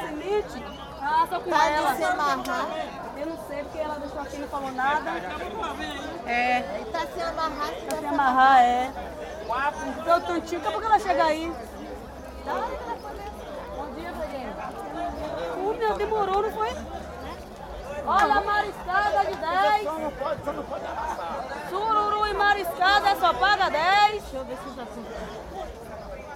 Praça Vacareza, Cachoeira - BA, Brasil - Feira, Vendedora de marisco - Market Place, a seafood saleswoman.
Feira, Sábado de manhã, uma vendedora de mariscos de coqueiros.
Market place, saturday morning, a seafood saleswoman.